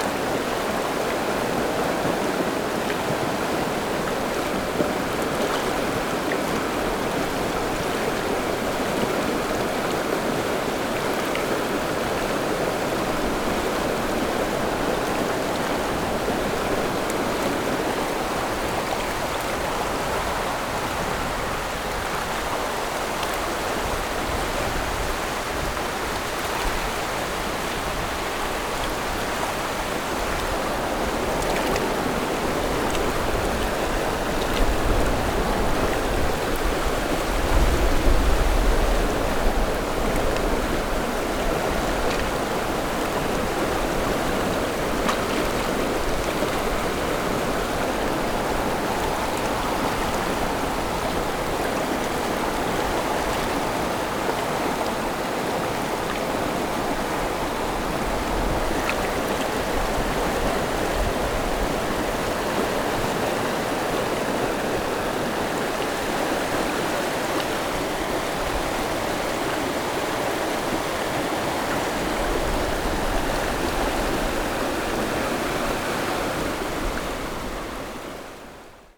蘭陽溪, 員山鄉中華村 - Stream after Typhoon
Stream after Typhoon, Traffic Sound
Zoom H6 MS+ Rode NT4